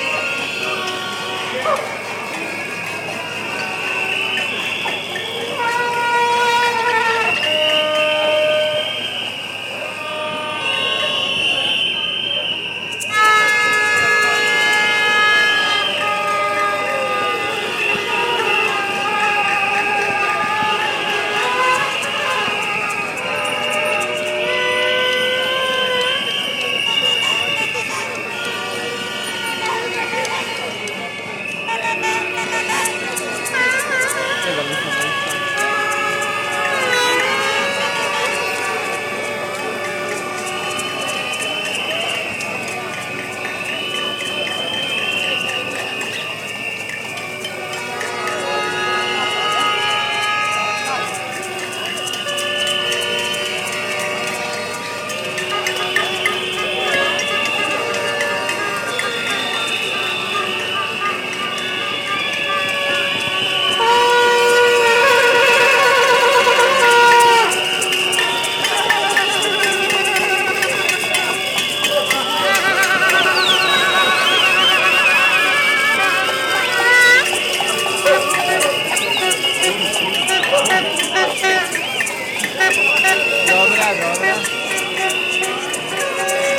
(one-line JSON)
{"title": "Zagreb, the art of noise in Gunduliceva - against the devastation of Varsavska street", "date": "2010-07-19 19:10:00", "description": "small instruments producing a lot of noise in demonstrations against the devastation of the public pedestrian zone in Varsavska street, center of town", "latitude": "45.81", "longitude": "15.97", "altitude": "130", "timezone": "Europe/Zagreb"}